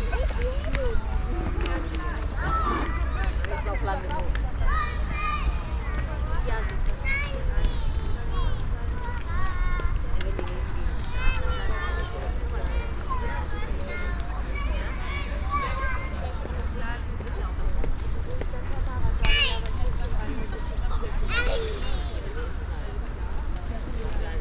playgound in public park in the afternoon
kids and parents
soundmap nrw: social ambiences/ listen to the people in & outdoor topographic field recordings